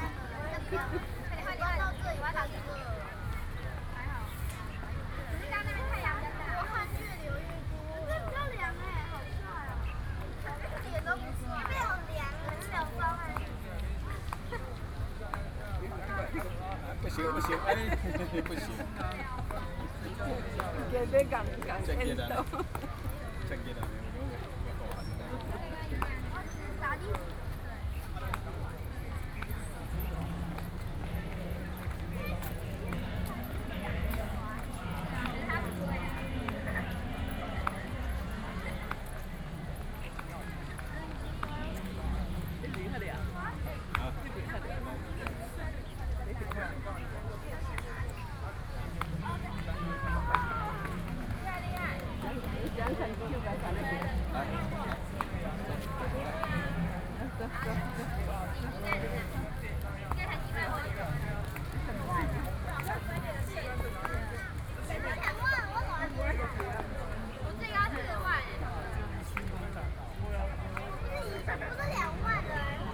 The crowd, Children's sports competitions, Sony PCM D50, Binaural recordings
北投區, 台北市 (Taipei City), 中華民國, 7 July 2013, 10:24